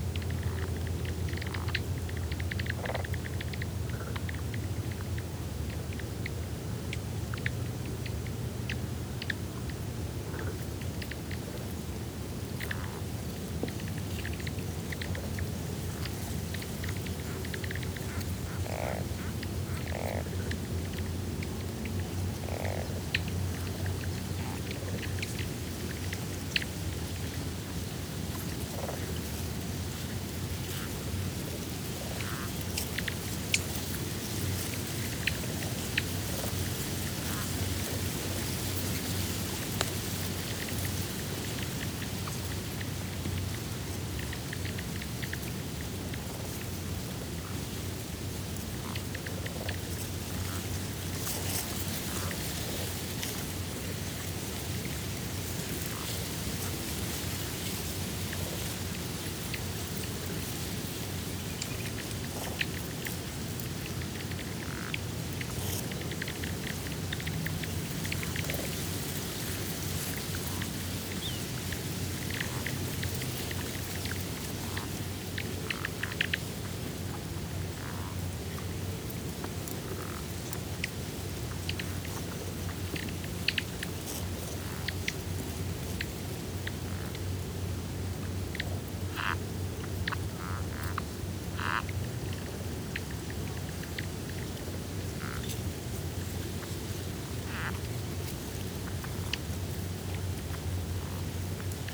새만금 Saemangeum former tidal reedland
새만금_Saemangeum former tidal reedland...this area is now behind the Saemangeum sea-wall and as such has undergone rapid ecological transformation...adjacent former reedland is now in agricultural use...the whole area is under development and transformation